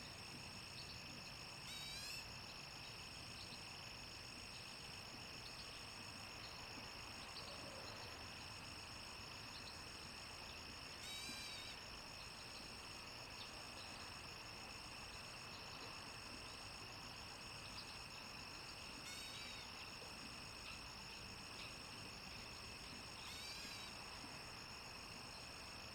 Taomi Ln., Puli Township - Bird calls
Bird calls, Frogs chirping
Zoom H2n MS+XY